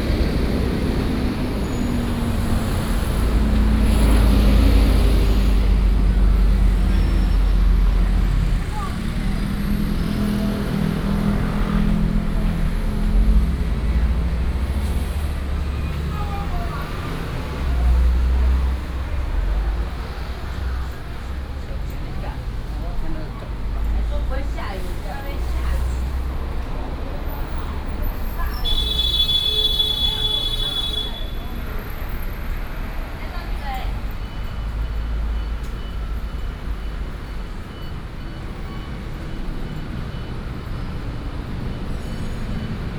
Jianguo Rd., 台中市, Taiwan - Walking on the road
Walking on the streets, Traffic Sound
Central District, Taichung City, Taiwan, 6 September 2016